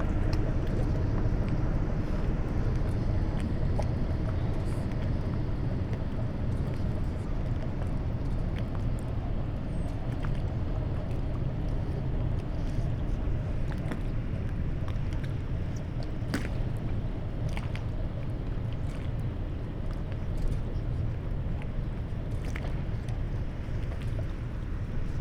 Grünau, Berlin, Deutschland - autumn Sunday at the pier
freighter passing by, gentle waves lapping, people waiting for the ferry
(Sony PCM D50, Primo EM172)
16 October, 13:50